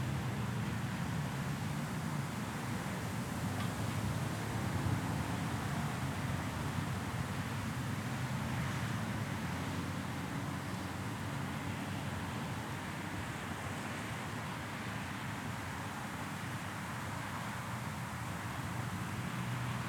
White Bear Lake City Hall - Outside City Hall
Ambient sounds outside of the White Bear Lake City Hall. Highway 61 traffic, people coming and going from city hall, and the clock tower chiming can all be heard.
15 March, 14:48